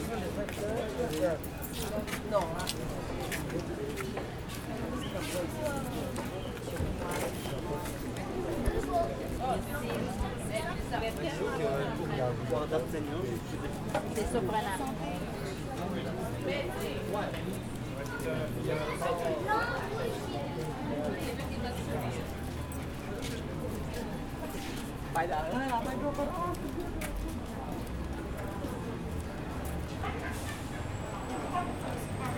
Old Town Quebec Lower Street July 24th 2010 1pm
Quebec, QC, Canada, 24 July 2010, 13:00